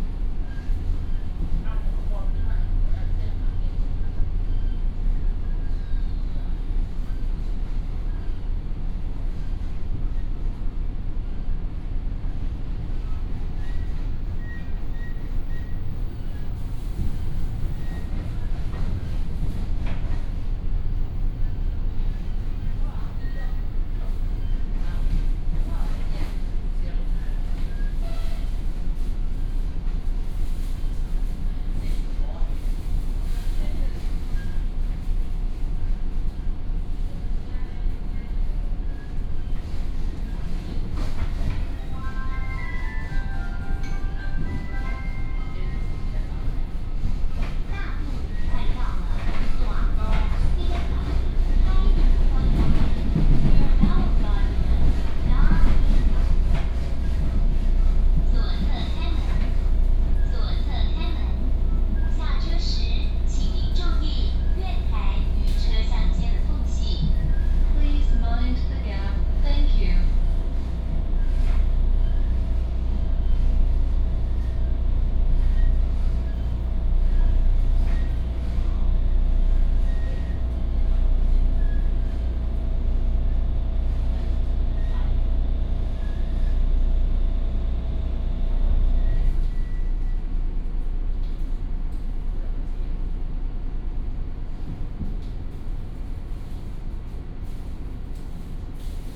Dadu District, Taichung City - Coastal Line (TRA)
Coastal Line (TRA), from Jhueifen station to Dadu Station
Taichung City, Taiwan, 19 January, ~10:00